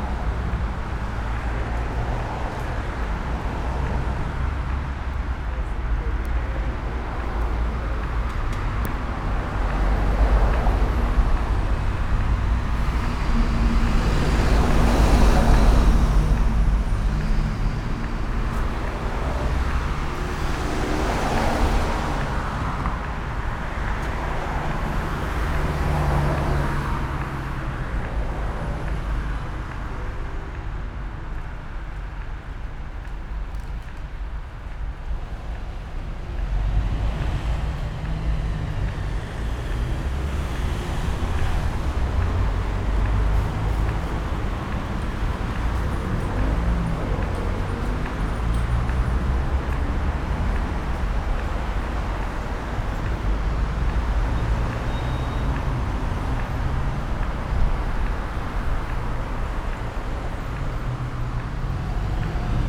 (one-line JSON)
{"title": "Gitschiner Staße/ Lindenstraße, Berlin, Deutschland - everyday life along gitschner street", "date": "2021-11-15 15:30:00", "description": "the audible pedestrian traffic light signal beat keeps the space under the subway in the crossing area together.", "latitude": "52.50", "longitude": "13.40", "altitude": "38", "timezone": "Europe/Berlin"}